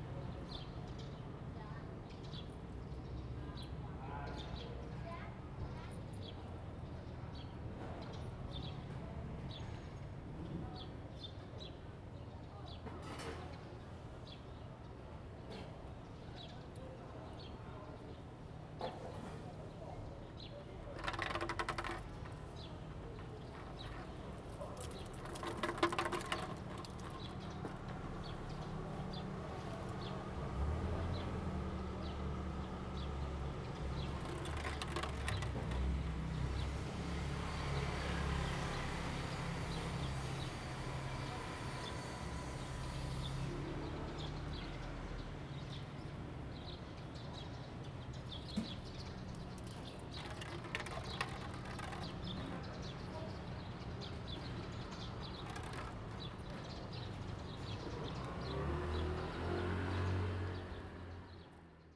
赤崁村, Baisha Township - Small village
In the square, in front of the temple, Faced with the village market
Zoom H2n MS+XY
22 October 2014, 11:37